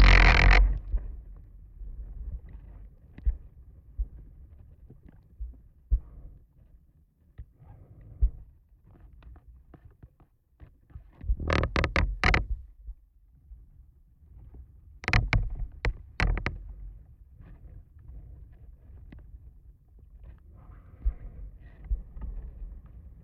Dual contact microphone recording of a tree branch, pressing against a wooden fence and occasionally brushing due to wind pressure. During the course of recording it started to rain, droplets can be heard falling onto the branch surface.